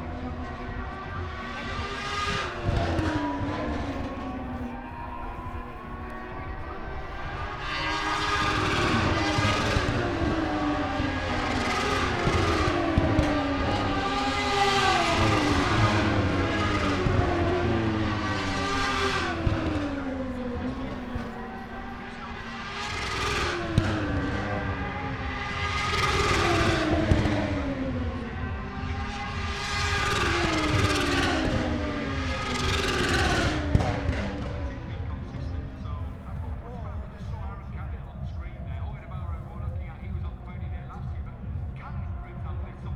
Silverstone Circuit, Towcester, UK - british motorcycle grand prix 2022 ... moto two ...
british motorcycle grand prix 2022 ... moto two free practice two ... inside maggotts ... dpa 4060s clipped to bag to zoom h5 ...